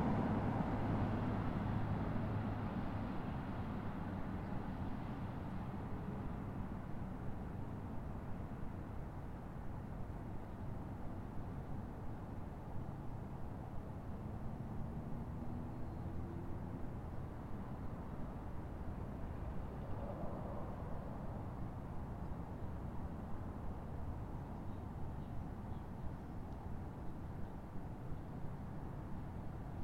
{"title": "N Nevada Ave, Colorado Springs, CO, USA - Shovel Chapel East", "date": "2018-04-26 22:33:00", "description": "Recorded behind Shove Chapel, on the east side, using a Zoom H2 recorder.\nCars are the main focus of the recording, with lots of variation.", "latitude": "38.85", "longitude": "-104.82", "altitude": "1846", "timezone": "America/Denver"}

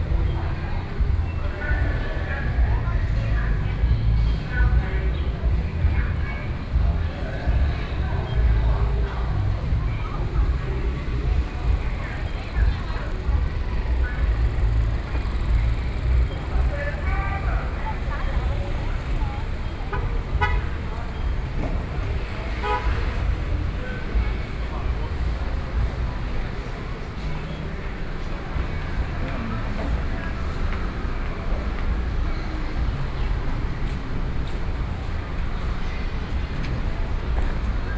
{"title": "中山公園, 羅東鎮集祥里 - Walking through the park", "date": "2014-07-27 17:03:00", "description": "Walking through the park, Traffic Sound", "latitude": "24.68", "longitude": "121.77", "altitude": "13", "timezone": "Asia/Taipei"}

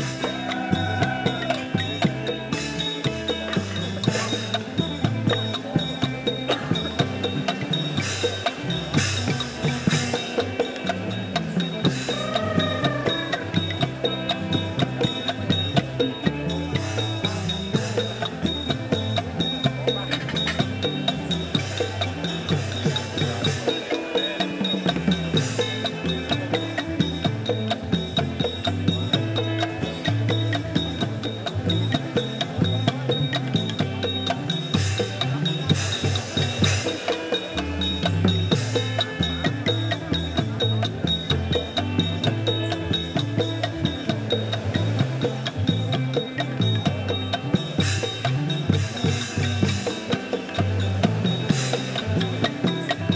{"title": "Khuekkhak, Takua Pa District, Phang-nga, Thailand - 10 more minutes of rock!", "date": "2017-03-10 19:05:00", "description": "On the beach at Khao Lak. Sarojin House band. Surf and catering sounds too.", "latitude": "8.74", "longitude": "98.24", "altitude": "11", "timezone": "Asia/Bangkok"}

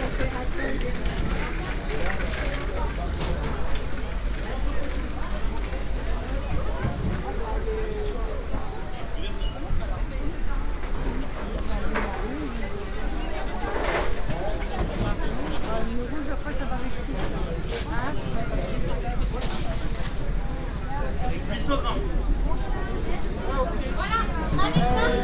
{"title": "Place La Fayette, Angers, France - (595) Marché La Fayette", "date": "2019-08-21 10:25:00", "description": "Binaural recording of Marché La Fayette.\nrecorded with Soundman OKM + Sony D100\nsound posted by Katarzyna Trzeciak", "latitude": "47.46", "longitude": "-0.55", "altitude": "46", "timezone": "Europe/Paris"}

